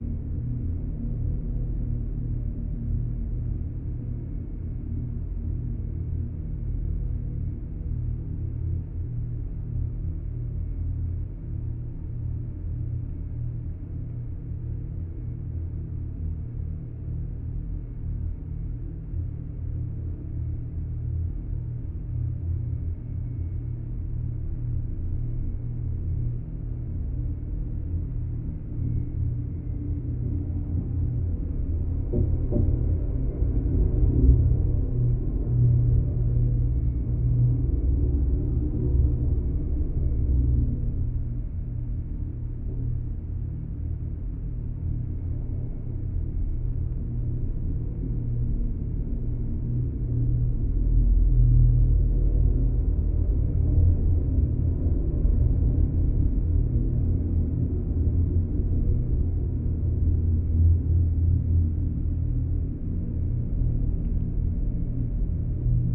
SBG, Puigneró, antigua fábrica - Sótano, resonancias estructura
Escuchando a través de las vigas que soportan la fábrica, en una de las naves vacías del sótano.